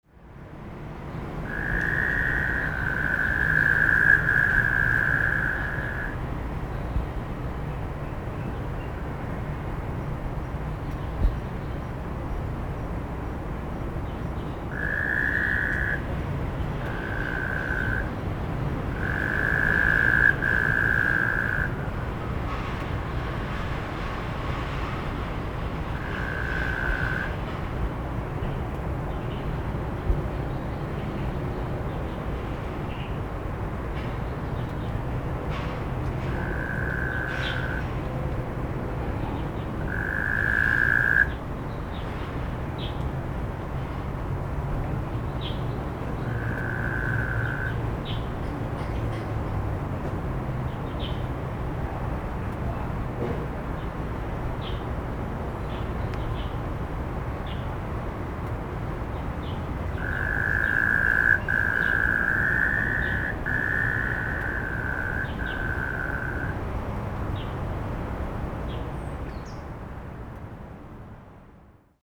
Frogs calling, Sony ECM-MS907, Sony Hi-MD MZ-RH1